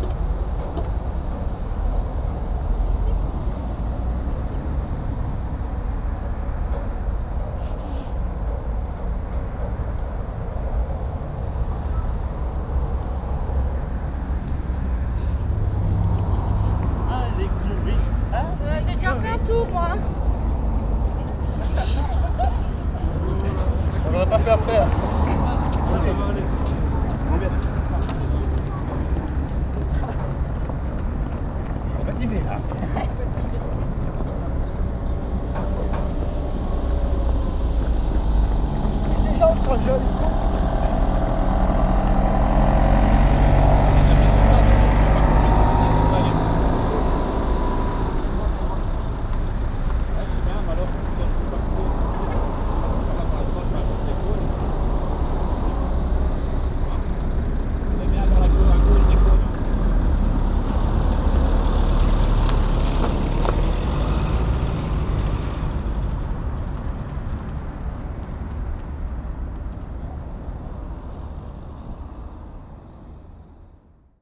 Gosselies, Rue Clément Ader, company race
People running near the airport.
Charleroi, Belgium, 21 October 2011, ~14:00